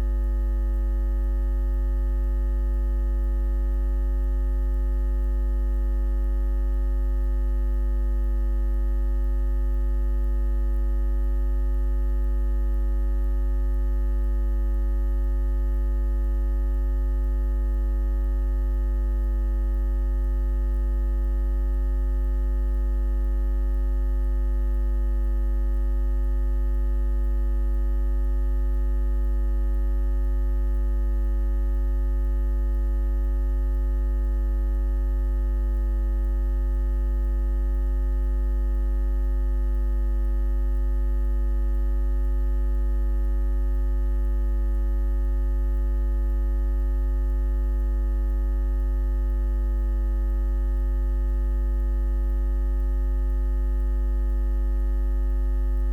Under the pylons, Waterloo Meadows, Reading, Reading, Reading, UK - Electromagnetic hum
I wanted to hear the electricity that makes all this possible - that makes recording sounds and uploading them to aporee and sharing them online etc. etc. into a feasible thing. I took an electric pickup coil and walked underneath the nearest accessible pylon to my home. You can hear in the recording that I am walking under and around the cables of the pylon; the loudest sounds are when I am standing directly beneath the wires. It's amazing to think of how this sound imbricates all our gadgets and the landscape.